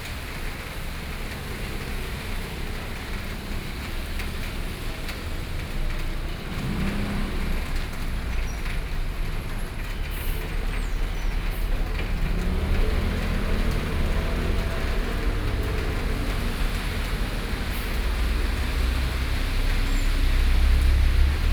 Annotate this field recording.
Rainy streets, Sony PCM D50 + Soundman OKM II